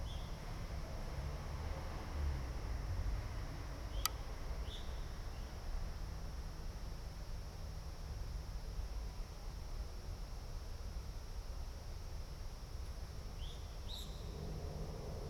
Ascolto il tuo cuore, città, I listen to your heart, city. Several chapters **SCROLL DOWN FOR ALL RECORDINGS** - Terrace August 19th afternoon in the time of COVID19 Soundscape
"Terrace August 19th afternoon in the time of COVID19" Soundscape
Chapter CXXVI of Ascolto il tuo cuore, città. I listen to your heart, city
Wednesday, August 19th, 2020. Fixed position on an internal terrace at San Salvario district Turin five months and nine days after the first soundwalk (March 10th) during the night of closure by the law of all the public places due to the epidemic of COVID19.
Start at 2:35 p.m. end at 3:15 p.m. duration of recording 40'00''
Go to Chapter CXXIV for similar situation.
Piemonte, Italia, August 2020